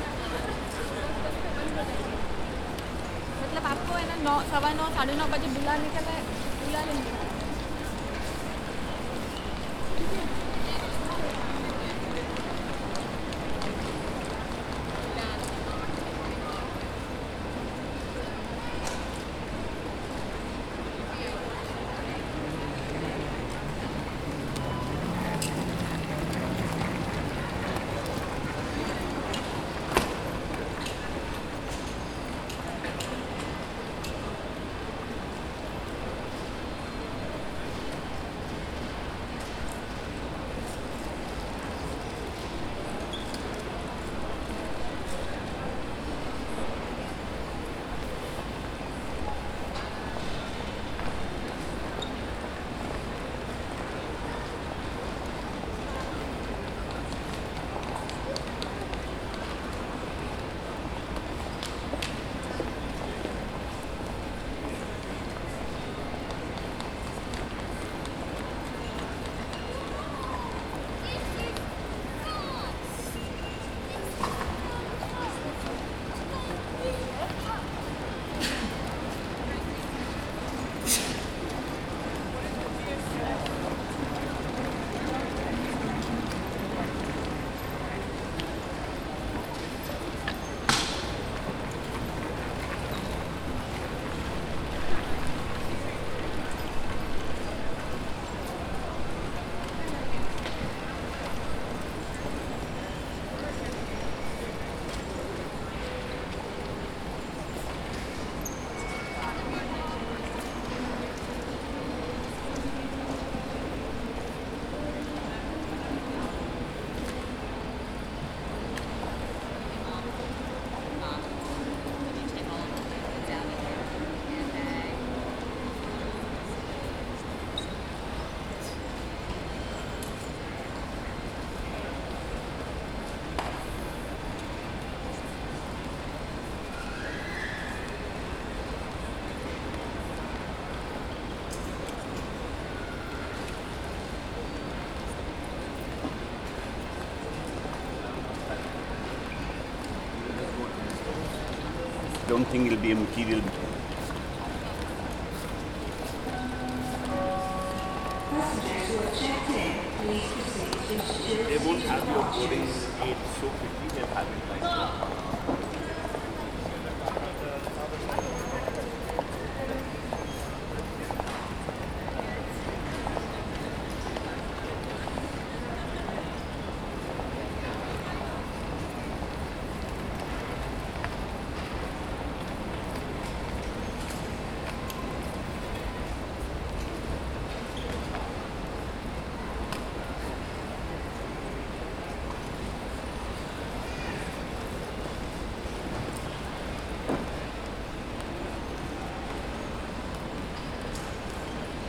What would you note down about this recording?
Standing still pointing the pics at the entrance to security. Tascam DR40, built-in mics